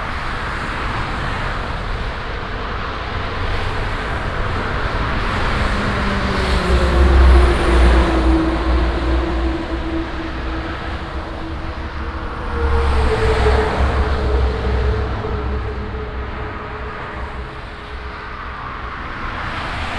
soundmap nrw: social ambiences/ listen to the people in & outdoor topographic field recordings
25 June 2009, 15:30